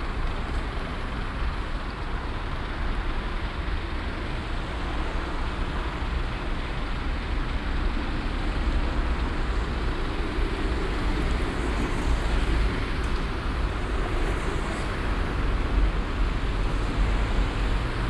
strassen- und bahnverkehr am stärksten befahrenen platz von köln - aufnahme: morgens
soundmap nrw: